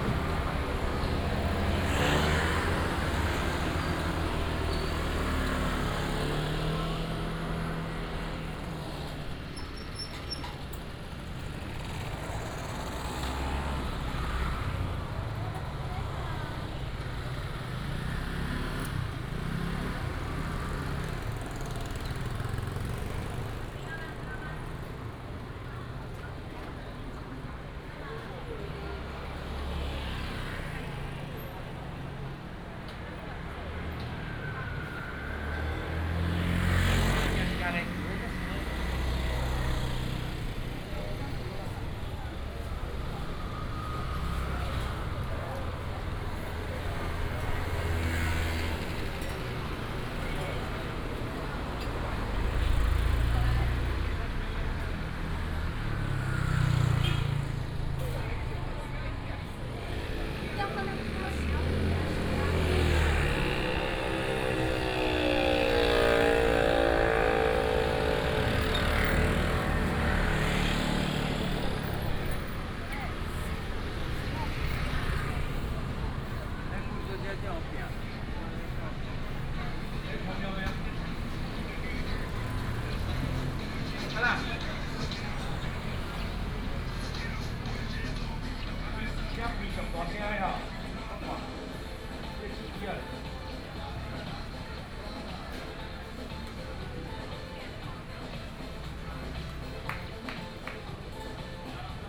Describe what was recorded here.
Walk through the market, The sound of the vendor, Traffic sound, sound of the birds